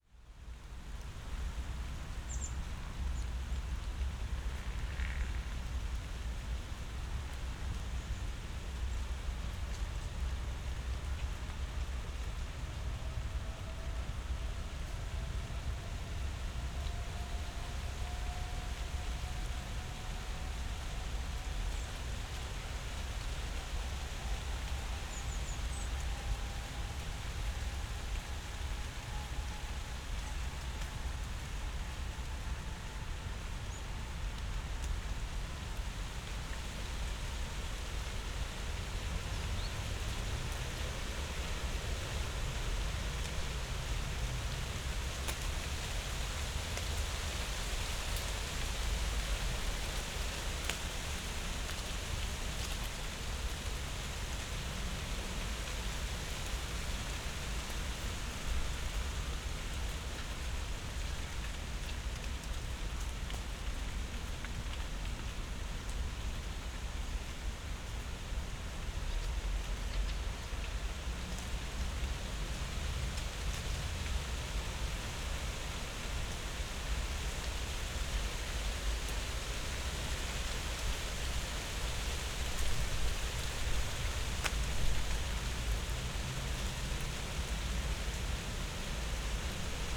Tempelhofer Feld, Berlin, Deutschland - falling leaves
gray autumn day, light breeze in the poplars, some rain drops and leaves are fallig down.
(SD702, AT BP4025)
11 November 2012, 14:00